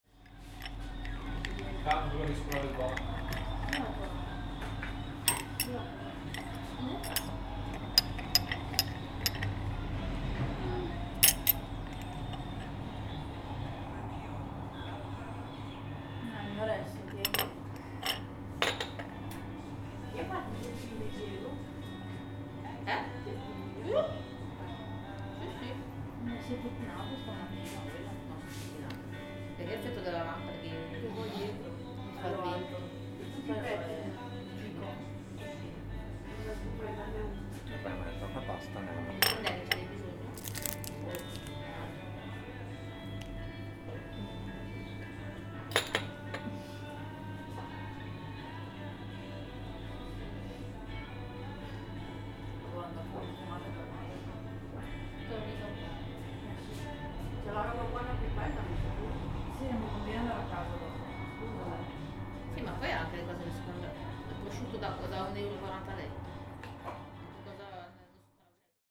Kaffeebar, Dolce, Süsses, Amaretti, Café lungo, Panforte, Grappa